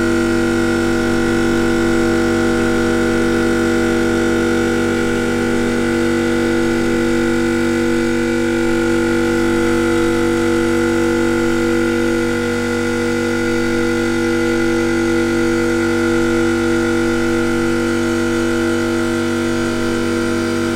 Bildmuseet, Gammlia, Umeå. Ventilation extractor
Ventilation extractor subtly changing pitch in the breeze.
Umeå, Sweden, 26 April 2011